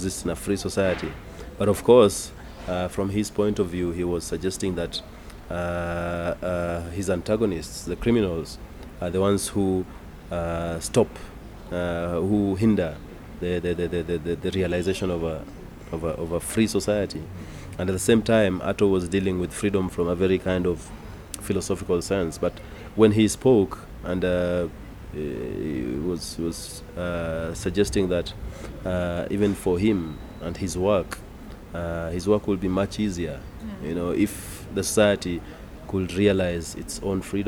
GoDown Art Centre, South B, Nairobi, Kenya - Security, Freedom and Public space…?
We are sitting with Jimmy, outside his office, in the courtyard Café of the GoDown Art Centre. The afternoon traffic on the dusty road outside the gate is relentless, and all kinds of activities going on around us; but never mind.. here we are deeply engaged in a conversation around freedom, art and public space… A day before, performance artist Ato had been arrested during her performance “Freedumb” outside the Kenya National Archives. Jimmy had been involved in the negotiations with the chief of police that followed…
“Jimmy Ogonga Jimmy is a vagrant amnesiac. from time to time, he takes photographs, makes videos, talks (negatively) too much and scribbles with the intention that someone might read his nonsense.he occupies a small white space, which he calls CCAEA, where he spends too much time. his first family is in nairobi, so chances are high that he might be there too, most of the time.”